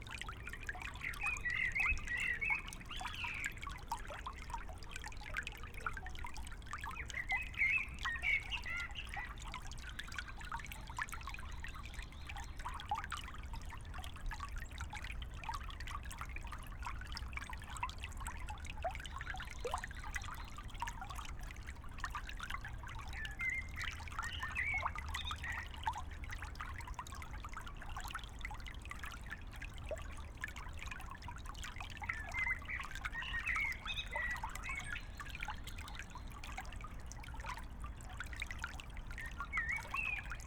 Hoheneiche, Ahrensfelde, Deutschland - river Wuhle, water flow, ambience
river Wuhle water flow near small bridge, ambience.
(SD702, AT BP4025)